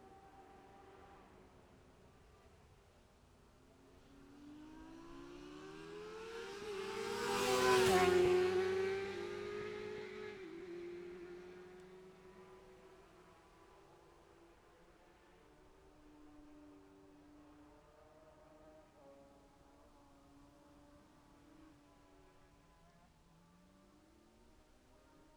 Jacksons Ln, Scarborough, UK - Gold Cup 2020 ...
Gold Cup 2020 ... sidecars practice ... Memorial Out ... dpa 4060s to Zoom H5 clipped to bag ...